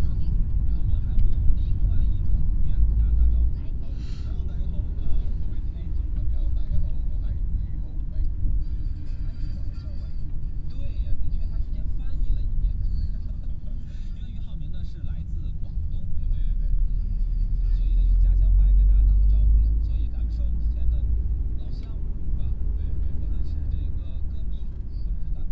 beijing, taxifahrt
beijing cityscape - taxi driving in the city is normal, because distances are far - this is one of 70.000 cabs driving around daily
project: social ambiences/ listen to the people - in & outdoor nearfield recordings